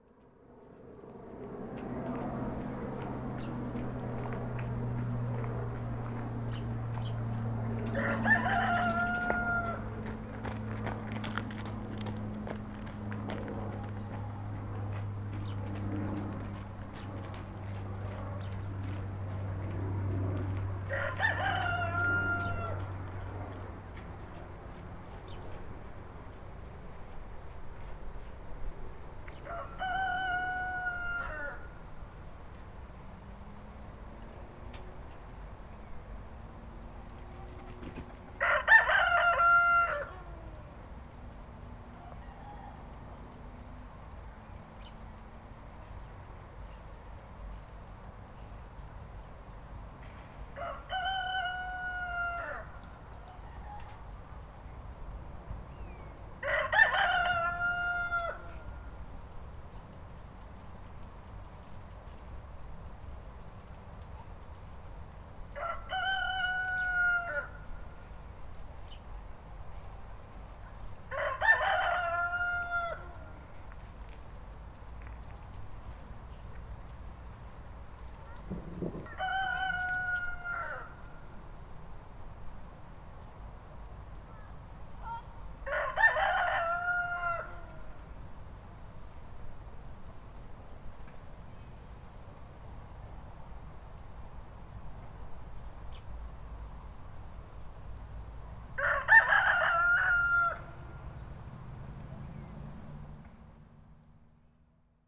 Les coqs se répondent dans les poulaillers du coin. Au pieds de la colline de Tresserve.
France métropolitaine, France, 27 August 2022